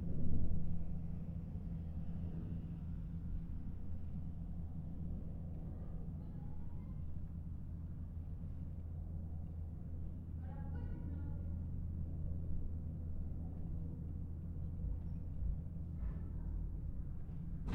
Rue Cardinale, Aix-en-Provence, Frankreich - Hotel Cardinal, backyard, quiet morning and churchbells
Soundscape of the hotels backyard, recorded on the windowsill of the bathroom. Sounds of birds, something that sounds like a vacuum cleaner, water running down a drain, a car, finally the bells of the nearby church. Binaural recording. Artificial head microphone set up on the windowsill of the bathroom. Microphone facing north. Recorded with a Sound Devices 702 field recorder and a modified Crown - SASS setup incorporating two Sennheiser mkh 20 microphones.
France métropolitaine, France, 17 October 2021